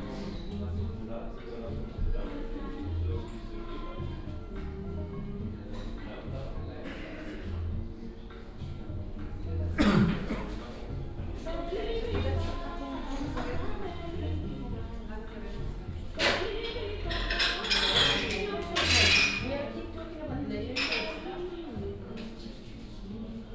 coffee break at Cafe Goldberg, Berlin Neukölln, ambience inside cafe.
(Sony PCM D50, OKM2)